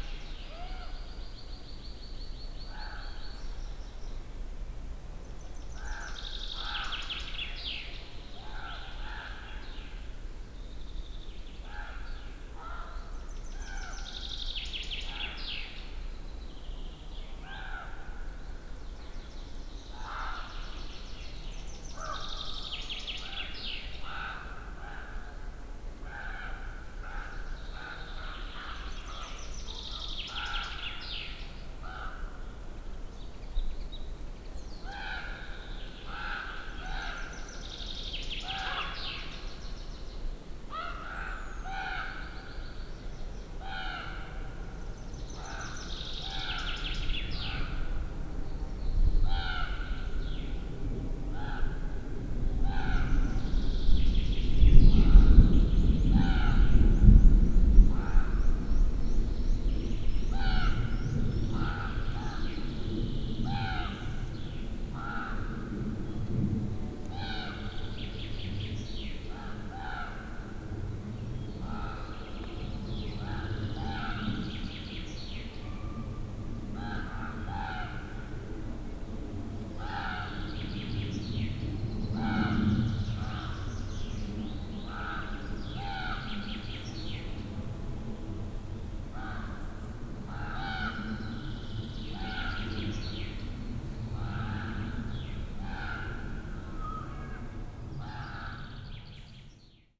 Zielonnka, forest road - crows and incoming storm
(binaural) forest ambience. crows chasing each other and yapping. rumble of incoming storm. sound of a chain saw far away. (sony d50 + luhd pm01bins)
Poland, 27 May 2016